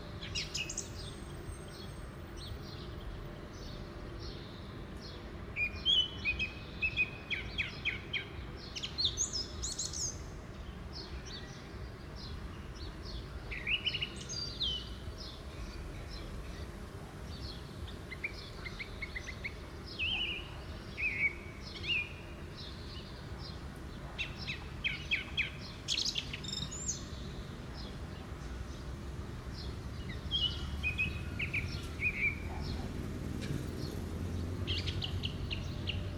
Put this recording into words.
Пение птиц, насекомые. Звуки производства и утренний шум улицы